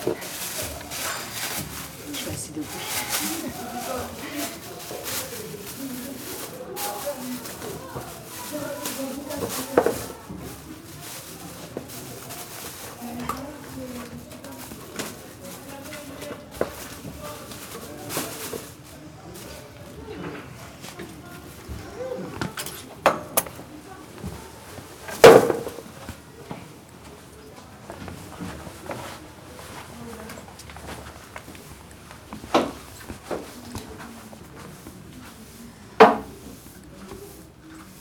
Couloir de la SEGPA, collège de Saint-Estève, Pyrénées-Orientales, France - Ménage
Preneur de son : Nabil